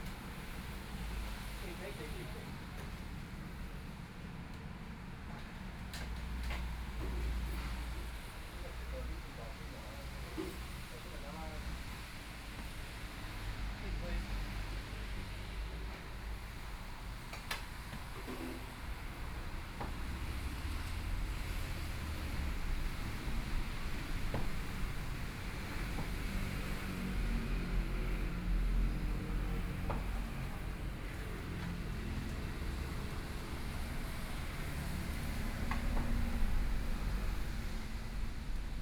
Zhongyang N. Rd., Beitou Dist. - Chat
Chat, Traffic Sound, Rainy days, Clammy cloudy, Binaural recordings, Zoom H4n+ Soundman OKM II